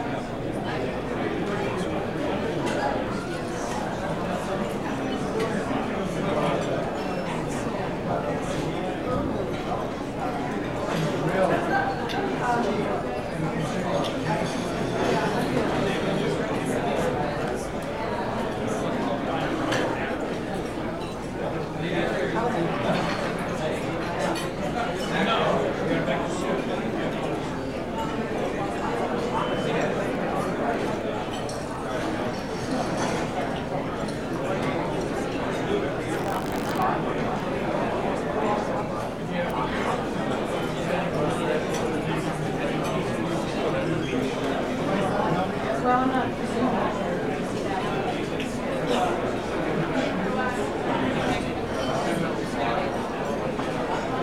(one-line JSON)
{"title": "Safeco Cafeteria - Cafeteria", "date": "1998-10-26 11:37:00", "description": "The sound level gradually builds as a big corporate lunch room fills up. What begins as individual diners morphs into an amorphous sea of white noise, a comforting wash of undifferentiated humanity.\nMajor elements:\n* Patter\n* Dishes, glasses and silverware\n* Chairs and trays\n* Ice dispenser\n* Microwave ovens\n* A cellphone\n* One diner realizes she's being recorded", "latitude": "47.66", "longitude": "-122.31", "altitude": "62", "timezone": "America/Los_Angeles"}